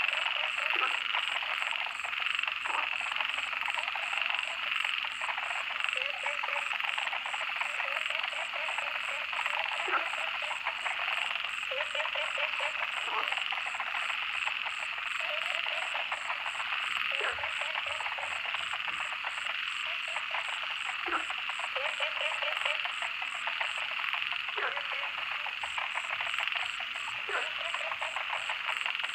江山樂活, 桃米里Nantou County - Ecological pool
Ecological pool, Various types of frogs, Frogs chirping
Zoom H2n MS+XY